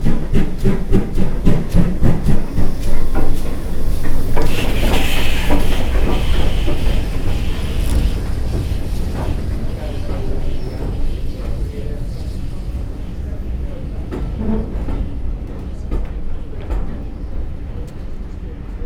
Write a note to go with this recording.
Steam train arrives at Winchcombe station on the GWR preserved steam railway.